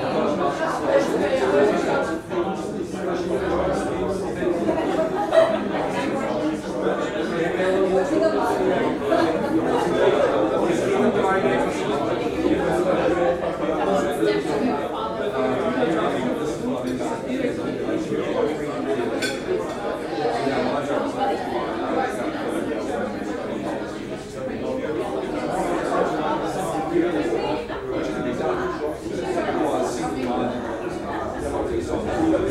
wien xvi - weinhaus sittl zum goldenen pelikan
weinhaus sittl zum goldenen pelikan